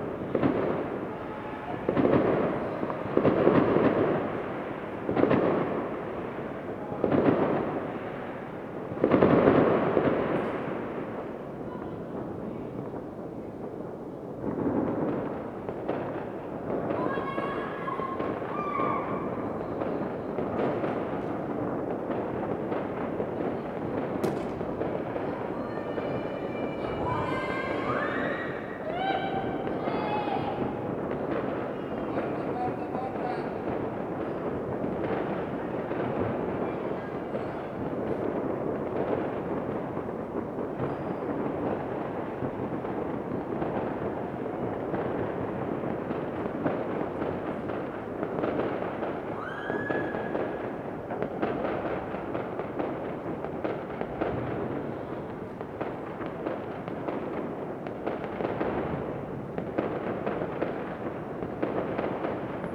"New Year night in the time of COVID19": soundscape.
Chapter CL of Ascolto il tuo cuore, città. I listen to your heart, city
Monday December 28th 2020. Fixed position on an internal terrace at San Salvario district Turin, about seven weeks of new restrictive disposition due to the epidemic of COVID19.
Start at 11:46 a.m. end at 00:46 p.m. duration of recording 01:00:00
Piemonte, Italia, December 2020